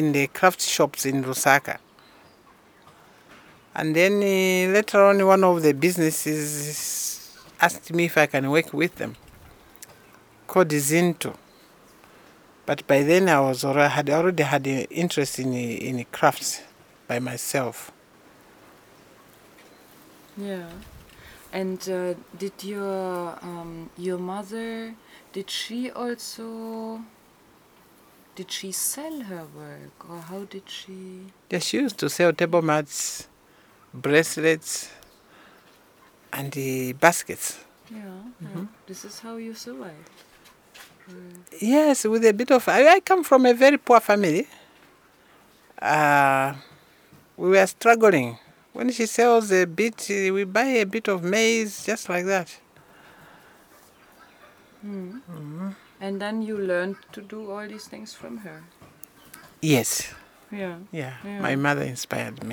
Harmony, Choma, Zambia - My Mum inspired me...
Esnart Mweemba is an artist and craftswomen, researcher and trainer from Choma Zambia and belongs to the BaTonga. We made these recordings in Esnart’s studio on her farm in Harmony (between Choma and Monze). So we had plenty of material and inspiration around us to go into detail in our conversation; and we did. Esnart shares her knowledge and experience with us, especially about traditional beadwork. She did extensive research in this field, which she gathered in interviews with elders... here she tells how she learnt her art from her mum who was blind...
14 November, 10:20